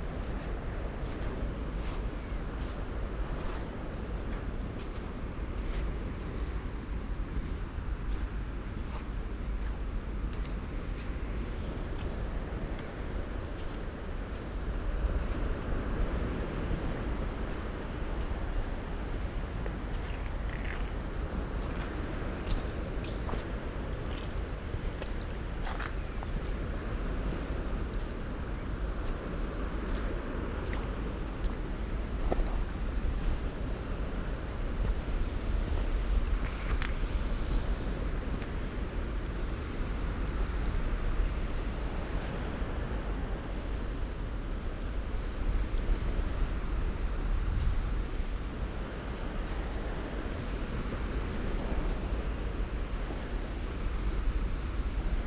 audresseles, meeresufer bei ebbe, spaziergang
gang durch und über schlickigen sand, nasse felsen, muschelreste, kleine steine be ebbe
fieldrecordings international:
social ambiences, topographic fieldrecordings